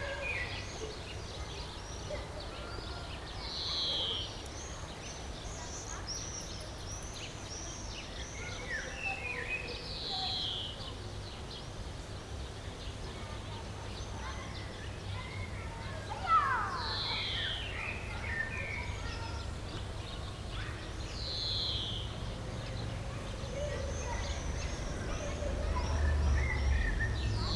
Kirchmöser Ost - village ambience
Kirchmöser, quiet village ambience heard in a garden, kids playing in a distance
(Sony PCM D50, Primo EM272)